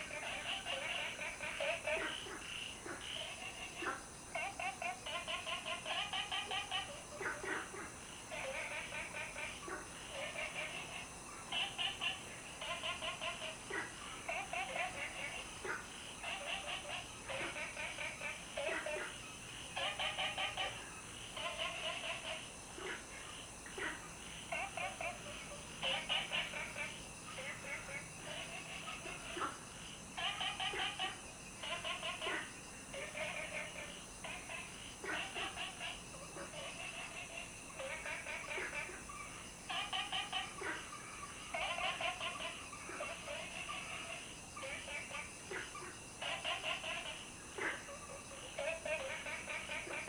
樹蛙亭, 埔里鎮 Puli Township - Frogs chirping
Frogs chirping
Zoom H2n MS+XY